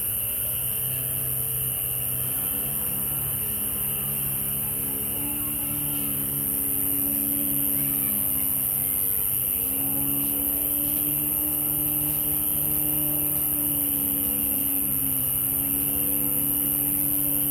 {"title": "Cra., Mompós, Bolívar, Colombia - La albarrada", "date": "2022-04-19 19:51:00", "description": "En las noche, a orilla del río, junto al edificio de La Aduana se escachan los grillos y los paseantes que circulan por este paso peatonal.", "latitude": "9.24", "longitude": "-74.42", "altitude": "12", "timezone": "America/Bogota"}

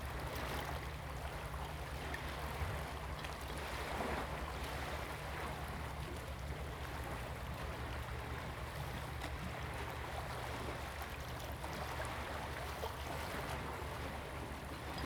{"title": "沙港漁港, Huxi Township - In the dock", "date": "2014-10-22 08:18:00", "description": "In the dock, Waves and tides\nZoom H2n MS+XY", "latitude": "23.61", "longitude": "119.62", "altitude": "7", "timezone": "Asia/Taipei"}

